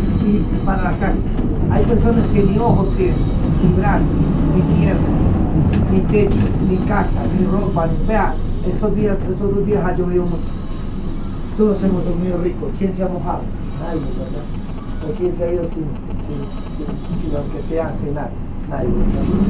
Santa Fe, Bogotá, Colombia - People on the bus
Práctica común sobre todo en las grandes ciudades de Colombia para conseguir dinero.(resistencia).
Vereda Dindal, Distrito Capital de Bogotá, Colombia, May 2013